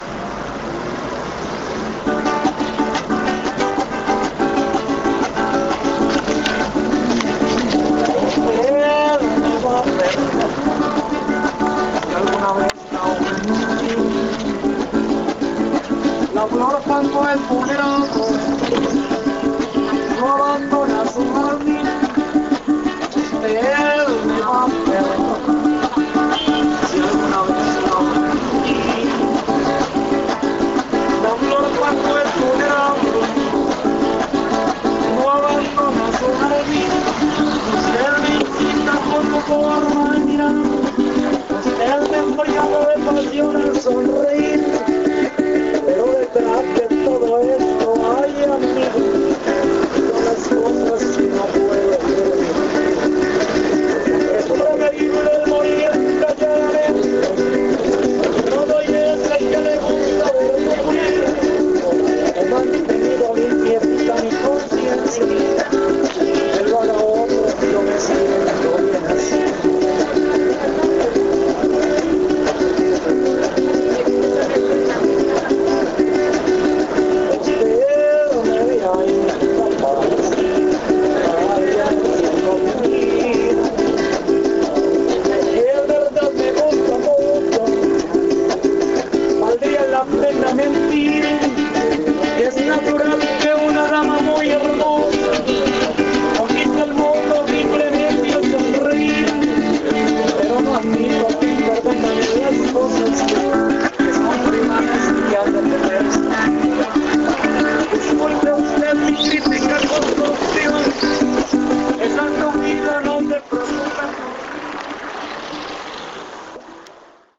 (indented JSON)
{
  "title": "Vera Cruz, Bogotá, Colombia - singer llanero",
  "date": "2012-05-17 10:05:00",
  "description": "Some one playing a cuatro in a public bus in Bogota D.C.",
  "latitude": "4.61",
  "longitude": "-74.07",
  "altitude": "2604",
  "timezone": "America/Bogota"
}